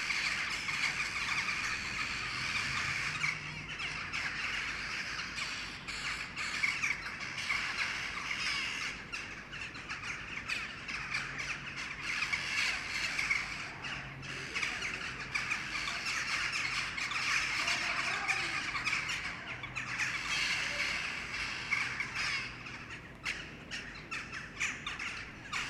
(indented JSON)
{
  "title": "Kortenbos, Den Haag, Nederland - Jackdaws gathering",
  "date": "2015-03-22 19:05:00",
  "description": "This is the daily ritual of Jackdaws gathering before going to sleep.",
  "latitude": "52.08",
  "longitude": "4.31",
  "altitude": "7",
  "timezone": "Europe/Amsterdam"
}